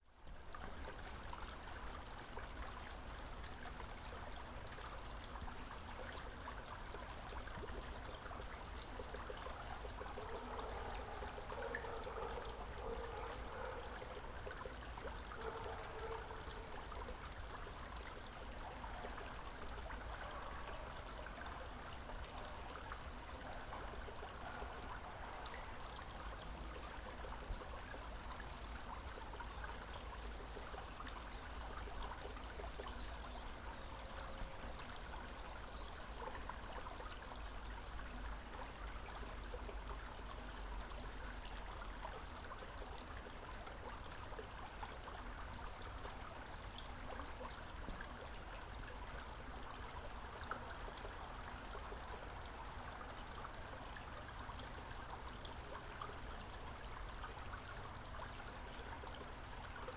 a brook on one side, a woodpecker on the other. some forest ambience.